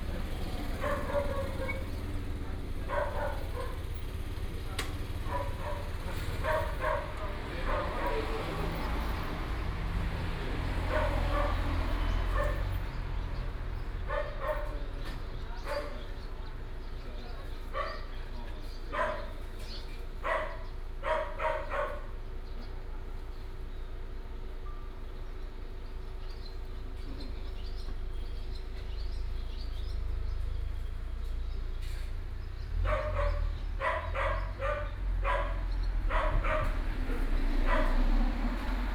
溫泉路125號, Checheng Township - Small village
In front of the store, traffic sound, Dog barking, Birds sound, Small village